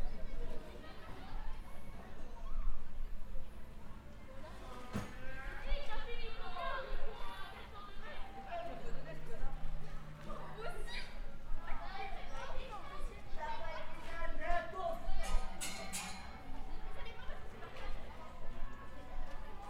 Rue du Stade, Piney, France - Récréation dans la cour du collège
C'est l'heure de la pause de l'après-midi au collège des Roises, les élèves sortent dans la cour.